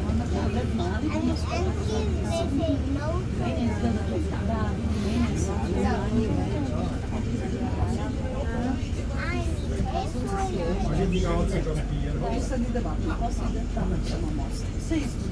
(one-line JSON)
{"title": "zürich 2 - zvv-fähre, ankunft hafen enge", "date": "2009-10-13 15:37:00", "description": "zvv-fähre, ankunft hafen enge", "latitude": "47.36", "longitude": "8.54", "altitude": "402", "timezone": "Europe/Zurich"}